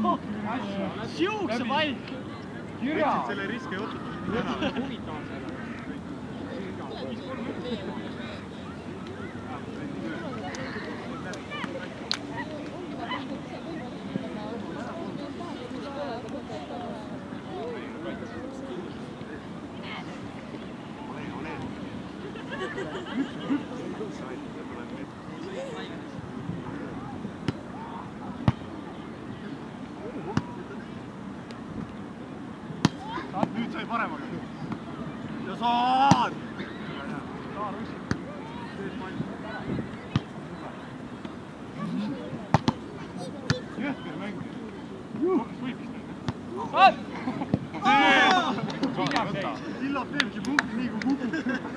Stroomi Beach Tallinn, binaural
recording from the Sonic Surveys of Tallinn workshop, May 2010